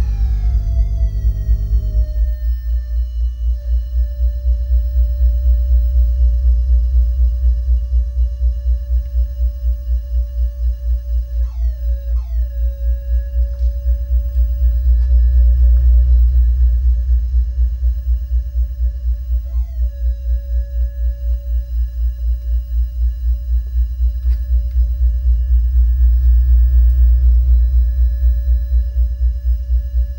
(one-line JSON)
{"title": "cologne, deutz mülheimer str, gebäude, visual sound festival, michael vorfeld - koeln, deutz mülheimer str, gebäude 9, visual sound festival, billy roisz", "description": "soundmap nrw: social ambiences/ listen to the people - in & outdoor nearfield recordings", "latitude": "50.95", "longitude": "6.99", "altitude": "49", "timezone": "GMT+1"}